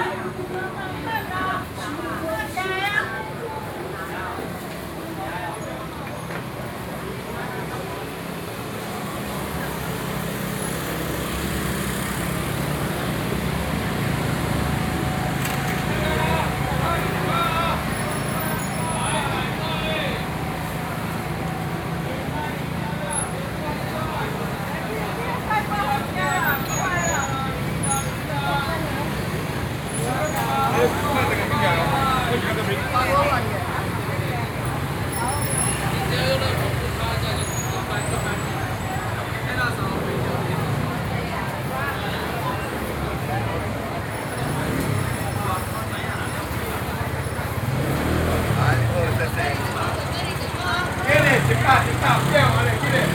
Zhongyang N. Rd., Sanchong Dist., New Taipei City - SoundWalk, Traditional markets
Sanzhong District, New Taipei City, Taiwan, 9 November